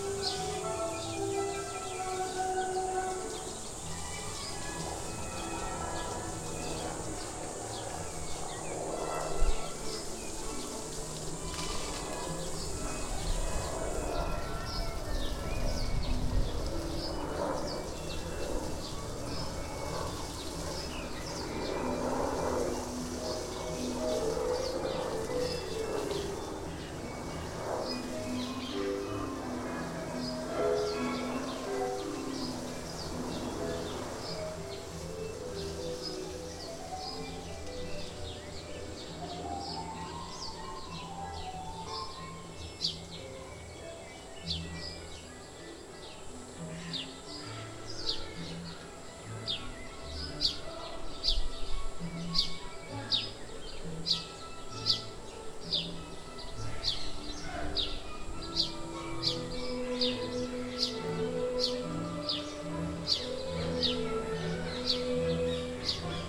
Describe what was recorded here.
The birds get ready for a summer sunset, the insects as well, the youth orchestra is preparing for the evening concert and the gardener hosing the orchard trees.